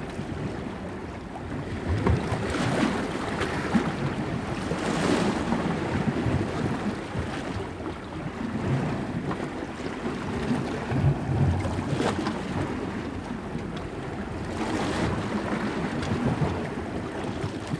On the beach, Hiddensee - water between rocks at night. [I used the Hi-MD-recorder Sony MZ-NH900 with external microphone Beyerdynamic MCE 82]
Insel Hiddensee, Germany, 16 October, ~22:00